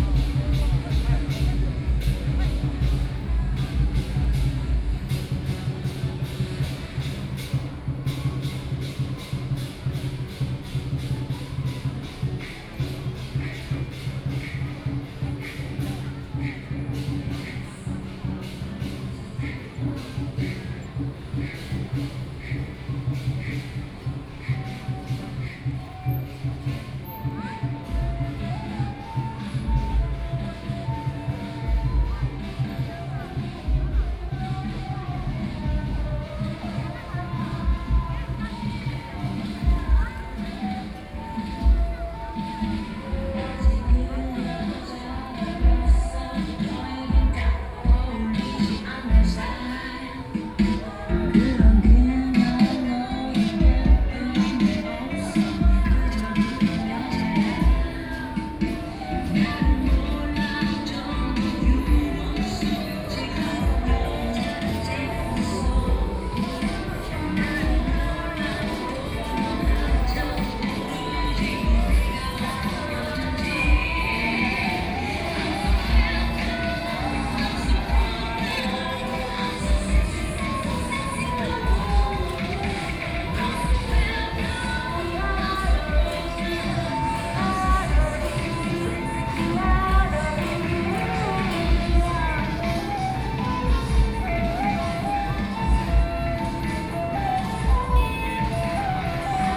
Xinmin Rd., 宜蘭市東門里 - Festival
Festival, Traffic Sound, At the roadside
Sony PCM D50+ Soundman OKM II
Yilan County, Taiwan, 26 July 2014